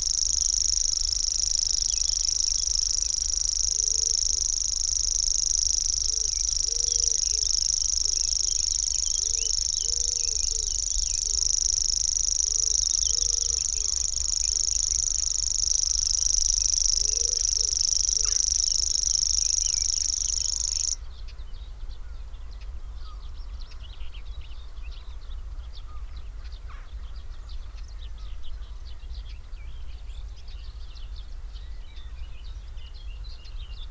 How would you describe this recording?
Grasshopper warbler ... in gannet territory ... mics in a SASS ... bird song ... calls from ... pheasant ... wood pigeon ... herring gull ... blackcap ... jackdaw ... whitethroat ... gannet ... tree sparrow ... carrion crow ... reed bunting ... some background noise ...